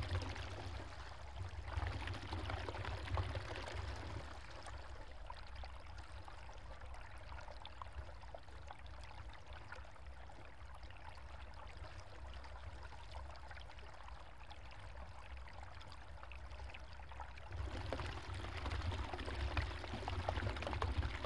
Mogán, Las Palmas, Gran Canaria, water in a pipe
water running down through a pipe from a mountain waterbank